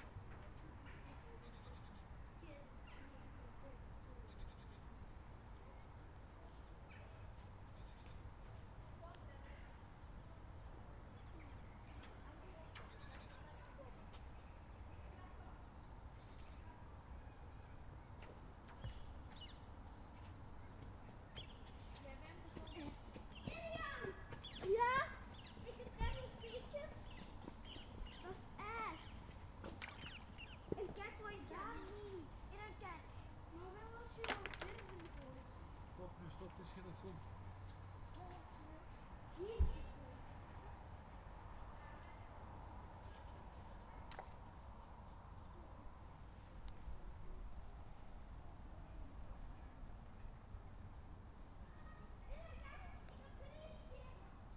Children throwing rocks on the ice